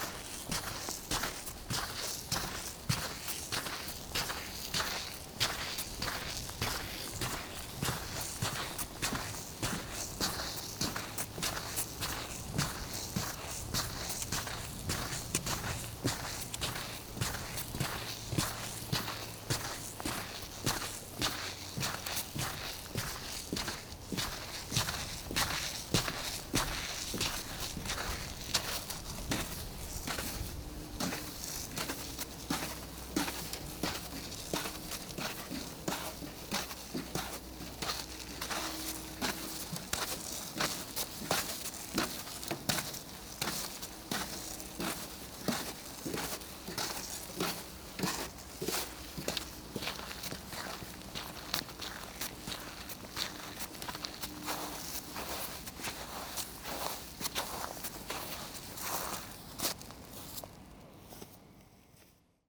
Walking on the completely frozen wooden bridge over the Loing river.
Veneux-les-Sablons, France, 28 December, 06:50